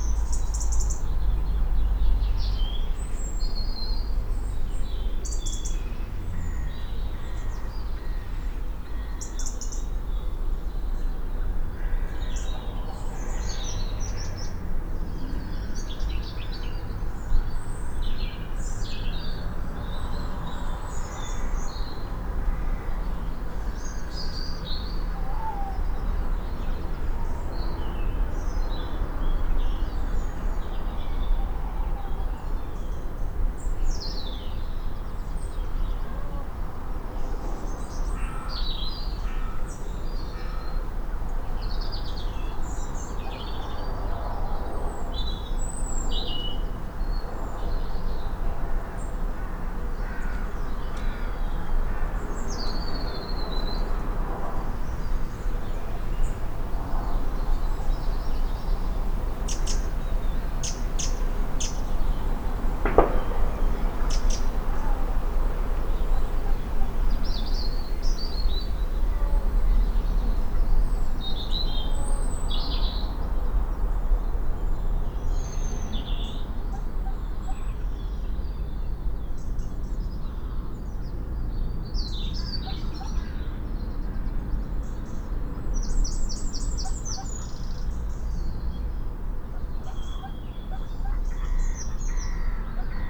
Pergola, Malvern, UK - Early Morning Ambience
5am, the first birds and the last owls, shots, ducks land and take off, traffic begins.
West Midlands, England, United Kingdom